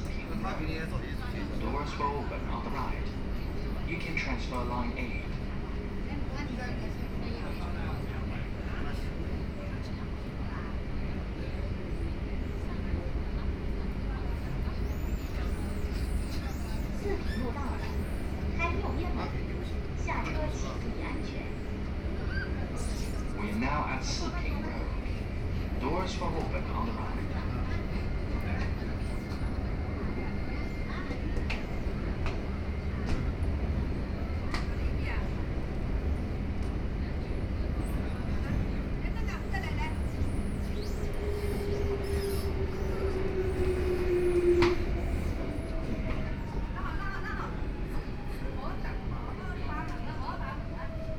Siping Road, Yangpu District - Line 10(Shanghai metro)
from Wujiaochang station to Siping Road station, Binaural recording, Zoom H6+ Soundman OKM II
Shanghai, China, 23 November, 09:58